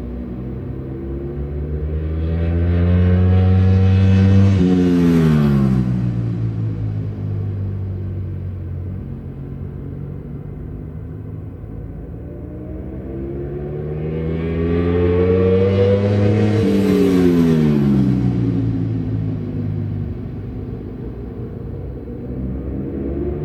World Super Bikes warm up ... Brands Hatch ... Dingle Dell ... one point stereo mic to mini-disk ...
West Kingsdown, Longfield, UK, 15 October 2000, 09:00